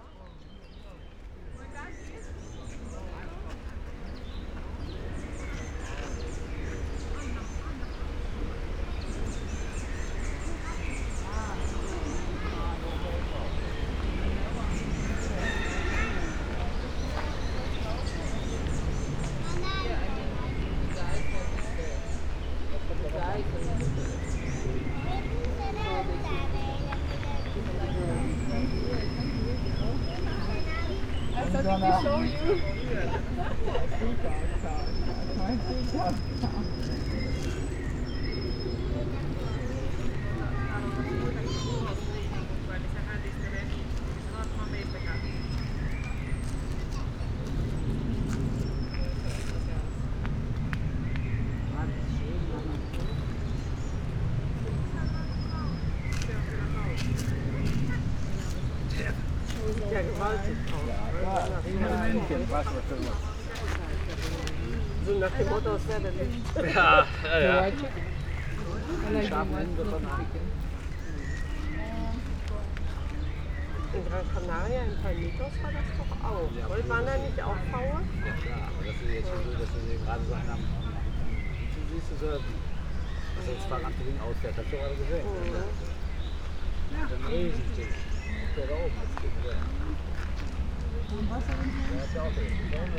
Pfaueninselchaussee, Berlin - caged and free voices
spoken words, peacock screams, wind, bird
Deutschland, European Union